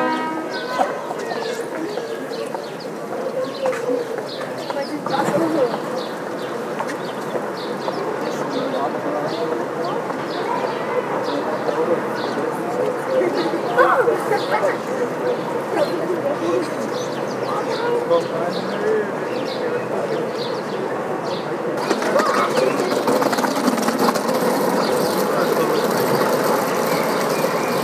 tondatei.de: lindau, hafen, abfahrt fähre
schiff, schiffshorn, leute